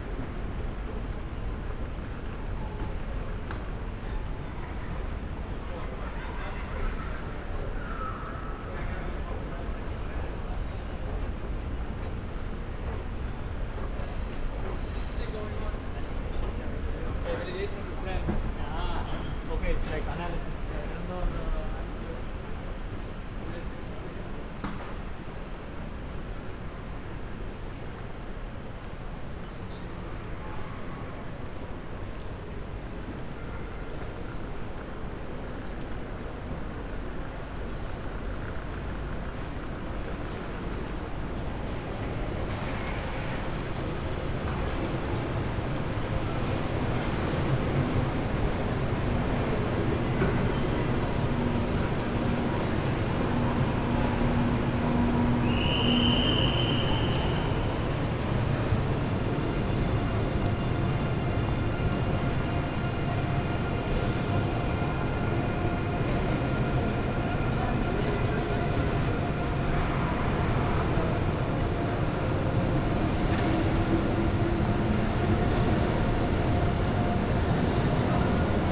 The Squaire, Frankfurt (Main) Flughafen - walking in the hall
Frankfurt airport, the Squaire business area, walking through the hall down to the ICE station
(Sony PCM D50, Primo EM172)
19 July 2019, 16:50